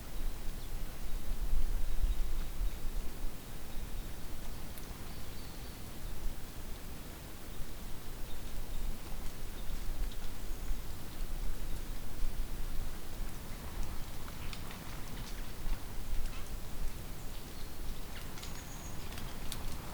Ľubietová, Ľubietová, Slovakia - Birds in a Forest at Sedlo pod Hrbom
Soundclip capturing bordsongs, birds flying over on the edge of forest. Moisture retained by trees condensates and drips down on fallen leaves. Cold, little bit windy morning at Veporské vrchy mountain range in central Slovakia.